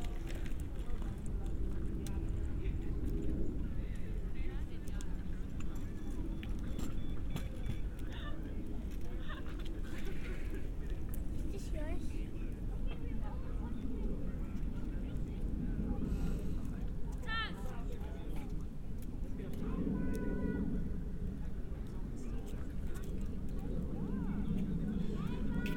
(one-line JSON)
{"title": "Westside Park, Johnson Rd NW, Atlanta, GA, USA - Greenspace & Playground", "date": "2021-11-24 16:29:00", "description": "Families with children and all the associated sounds, as captured from a park bench. People pass around the bench multiple times, and light wind can be heard. Planes are also present. A low cut was added in post.", "latitude": "33.78", "longitude": "-84.44", "altitude": "261", "timezone": "America/New_York"}